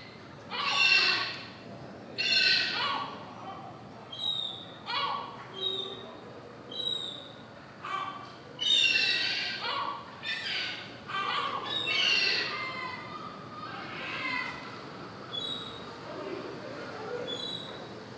Les Sables-d'Olonne, France - Seagulls nest on the church
Ici les goelands ont élu domicile sur l'église.
Prise de son depuis la rue, quelques passants.
There was some seagulls nest above the church, recorded by the street, some peoples.
/zoom h4n intern xy mic
20 June, 17:12